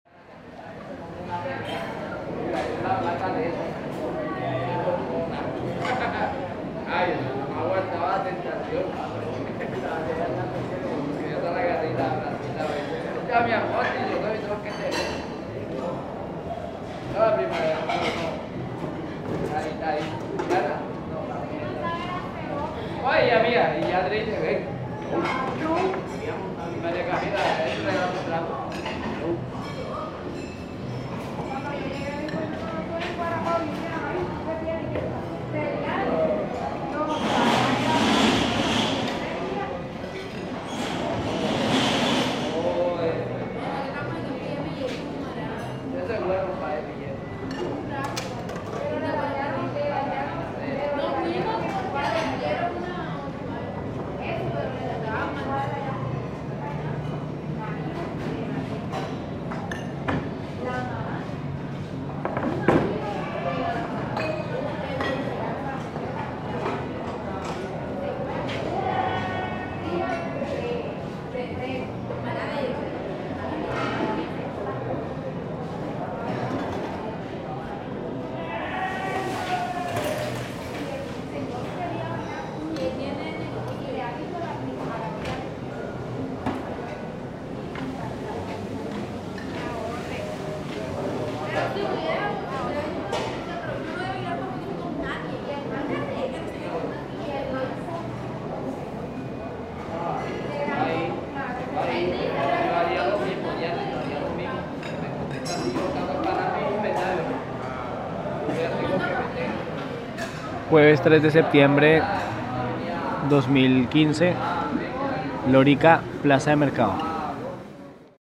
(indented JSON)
{
  "title": "Mercado Público de Lorica - Cordoba - Colombia",
  "date": "2015-09-03 09:38:00",
  "description": "Mercado Publico de Lorica en Cordoba - Colombia durante el rodaje documental de la ESTRATEGIA DE COMUNICACIÓN Y SU\nIMPLEMENTACIÓN, PARA LA PROMOCIÓN DE DERECHOS ECONOMICOS SOCIALES, CULTURALES, EXIGIBILIDAD DE DERECHOS Y ACCESO A LA JUSTICIA DE LAS VÍCTIMAS DEL CONFLICTO.",
  "latitude": "9.23",
  "longitude": "-75.82",
  "altitude": "8",
  "timezone": "America/Bogota"
}